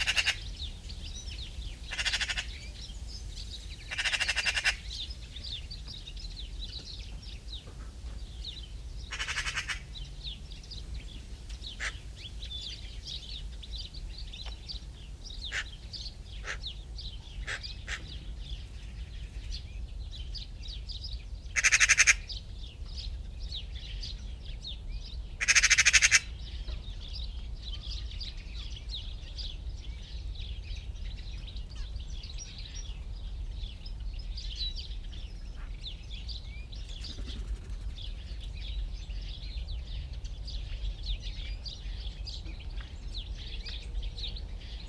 Das Nasse Dreieck (The Wet Triangle), wildlife and the distant city in a secluded green space, once part of the Berlin Wall, Berlin, Germany - Magpies very close and intricate twitterings
Unattended (by myself) microphones allow others to come very close at times. In this case the magpie must be in the next tree. This recording has no melodic song birds but is a rhythmic texture of chirps, tweets, twitters, caws and clacks - sparrows, greenfinches, crows, great & blue tits. Trains pass.